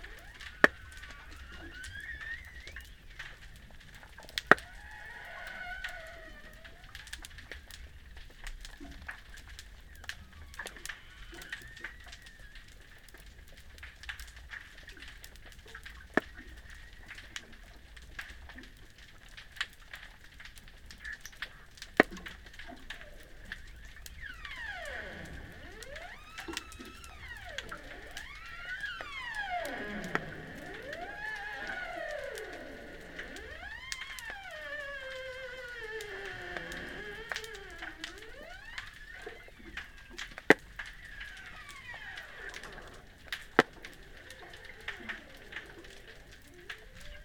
hydrophone in the waters right at the abandoned ship
Trachilos, Greece, 25 April 2019, 16:05